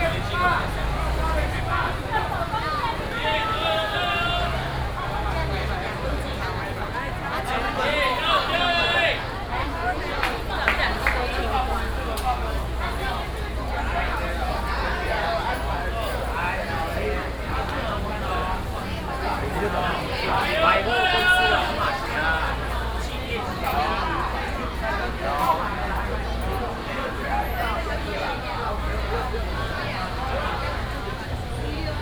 walking in the Traditional Markets, traffic sound, vendors peddling, Binaural recordings, Sony PCM D100+ Soundman OKM II
Nantun District, Taichung City, Taiwan